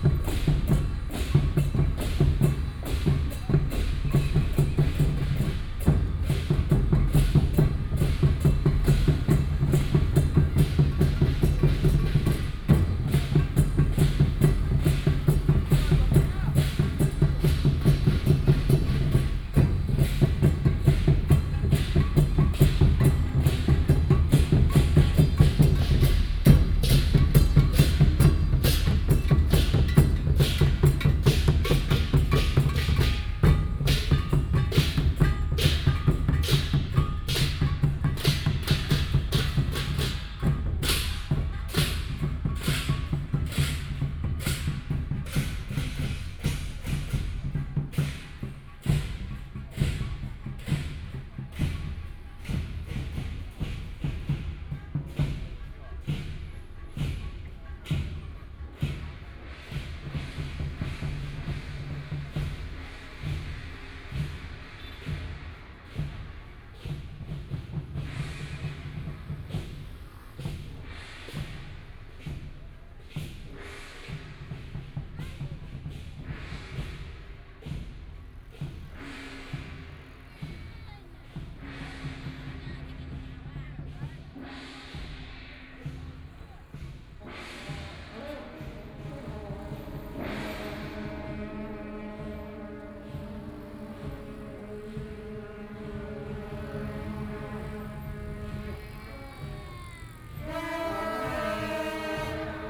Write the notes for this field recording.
Temple festival parade, A variety of traditional performances, Binaural recordings, Zoom H6+ Soundman OKM II